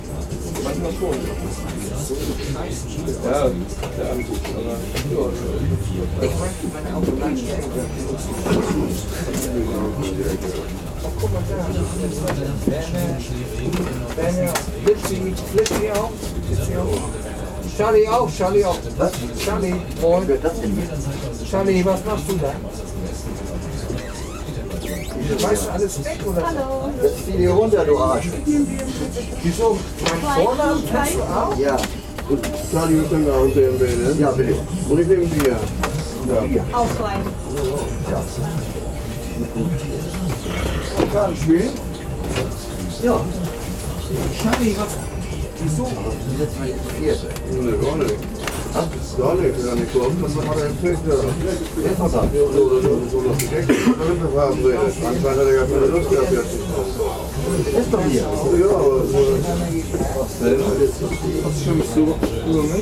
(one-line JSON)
{"title": "Helgoland, Deutschland - felsenkrug", "date": "2012-12-09 17:09:00", "description": "felsenkrug, bremer str. 235, 27498 helgoland", "latitude": "54.18", "longitude": "7.89", "altitude": "14", "timezone": "Europe/Berlin"}